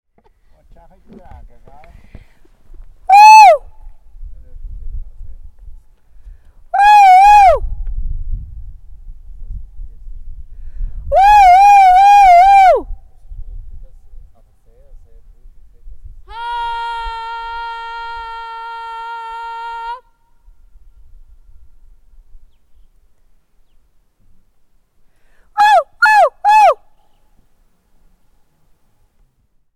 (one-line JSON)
{"title": "Echo leider nicht hörbar", "date": "2011-07-08 16:02:00", "description": "Echo ausprobiert, zweiseitig sogar, jedoch sehr leise und verzögert, Windgeräusche dominieren", "latitude": "46.37", "longitude": "7.68", "timezone": "Europe/Zurich"}